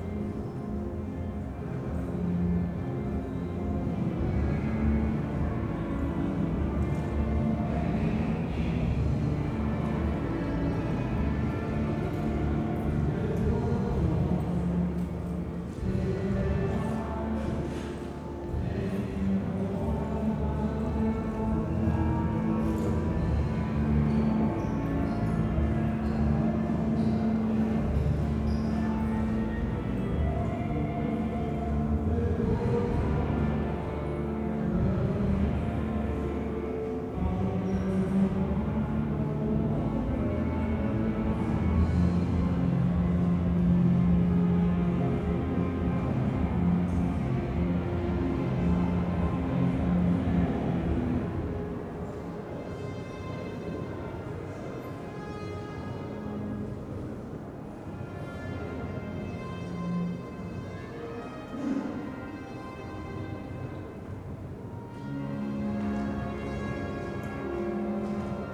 lobby during a concert of giant sand at wassermusik festival
the city, the country & me: august 5, 2011